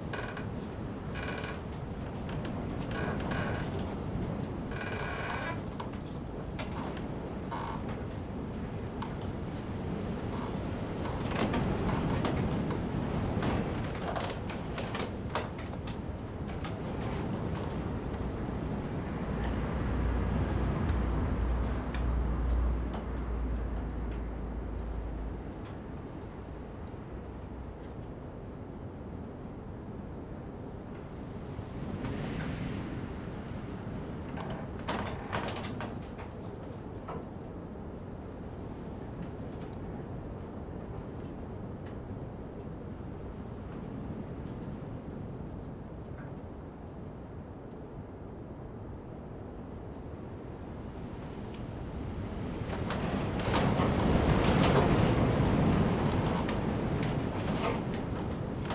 Fläsch, Schweiz - Wind in einem Holzschopf
WIND, KNARREN, AUTOPASSAGE
NOVEMBER 1998
Fläsch, Switzerland, 14 November 1998, ~18:00